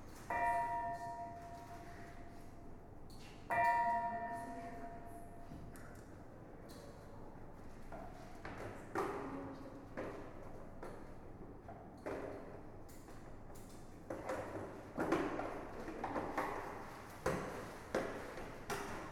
八事 名古屋 CSquare 鐘
20 June 2010, 20:22